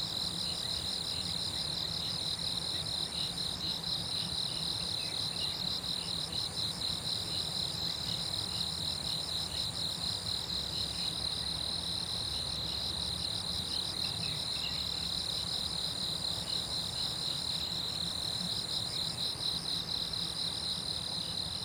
水上巷桃米里, Puli Township - In the grass
Early morning, Bird sounds, Insect sounds, In the grass, River Sound
Zoom H2n MS+XY